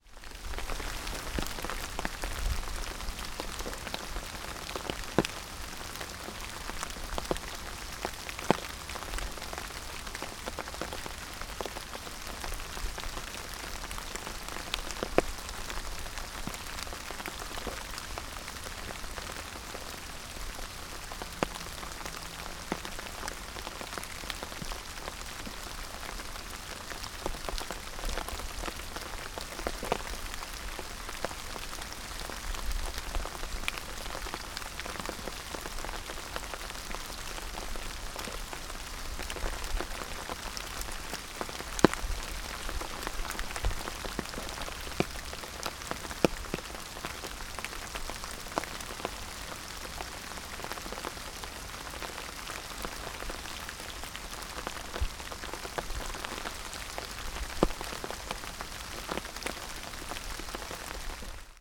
two trees, piramida - creaking trees
rain, drops on leaves and umbrella ... and few tree creaks